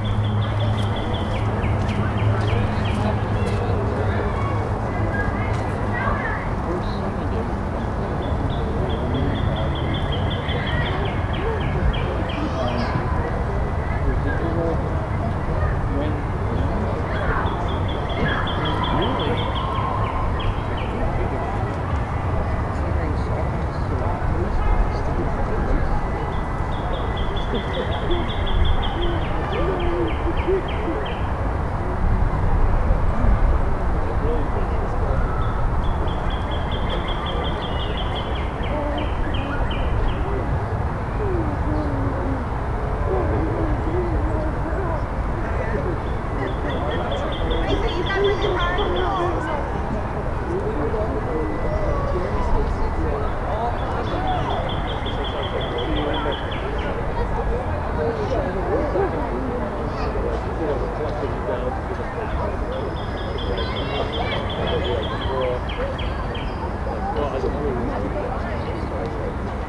Roswell Rd, Marietta, GA, USA - East Cobb Park Ambience
A recording of a busy park captured from a set of benches. This was one of the nicest days we've had in weeks, so both children and adults took the opportunity to get some fresh air and enjoy the sun. There's a playground right in front of where the recorder was positioned, and you can hear people shuffling along the path to the left of the recorder. Recording taken with Tascam dr-100mkiii and dead cat.